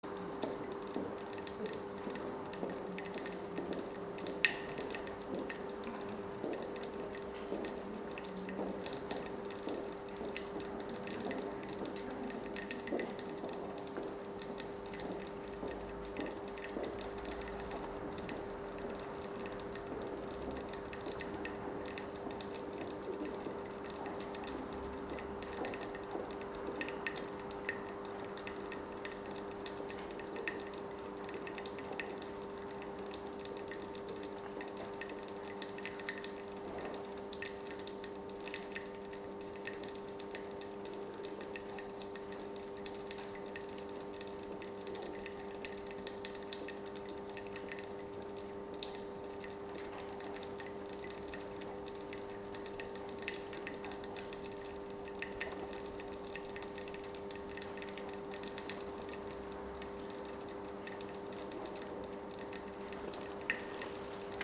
Via Cavallotti, Pavia, Italy - The Silent City I - rain, generator, steps
a very silent Sunday downtown. ligth rain from a gutter mixed with a closeby electric generator, hypnotic sound, few steps in the grey ambience.